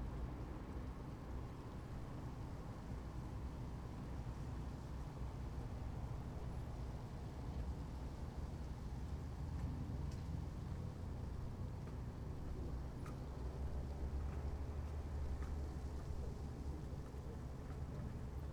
Berlin Wall of Sound, rudow alt-glienicke above highway tunnel artificial nature reserve 080909